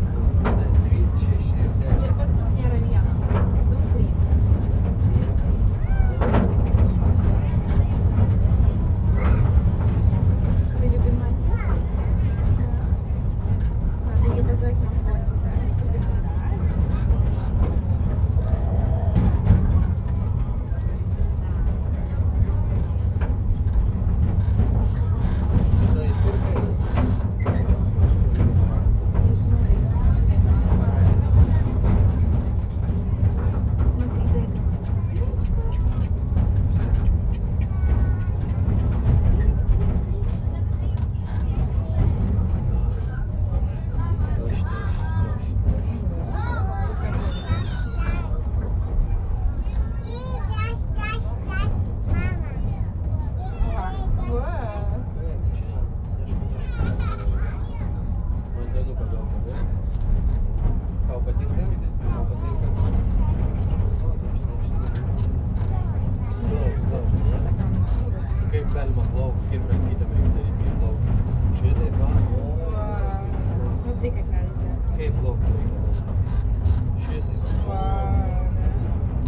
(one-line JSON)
{"title": "Anykščiai, Lithuania, back to trainstation", "date": "2014-08-31 14:40:00", "description": "tourist train returns to trainstation", "latitude": "55.53", "longitude": "25.11", "altitude": "70", "timezone": "Europe/Vilnius"}